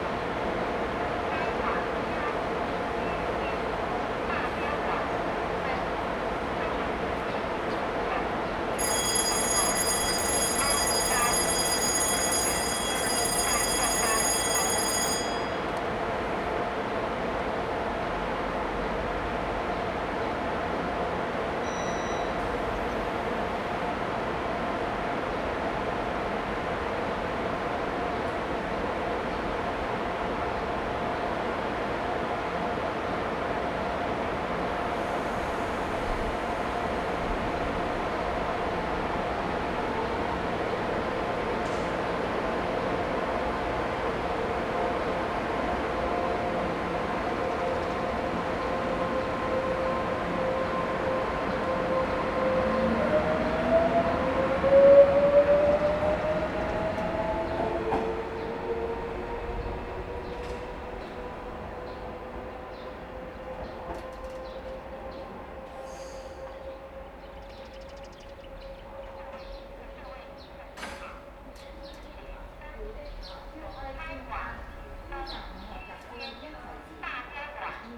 Zhongzhou Station - Waiting
in the Platform, Station broadcast messages, Train traveling through, Construction noise, Sony ECM-MS907, Sony Hi-MD MZ-RH1
台南市 (Tainan City), 中華民國, 29 March 2012